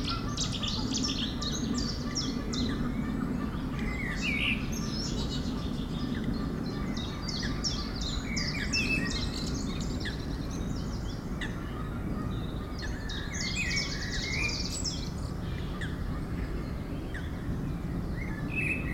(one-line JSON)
{"title": "Huldenberg, Belgium - Grootbroek swamp", "date": "2018-03-29 07:10:00", "description": "Grootbroek is a swamp and a pond, located in Sint-Agatha-Rode and Sint-Joris-Weert. Into the swamp, distant noise of the pond, and a blackbird singing.", "latitude": "50.79", "longitude": "4.64", "altitude": "30", "timezone": "Europe/Brussels"}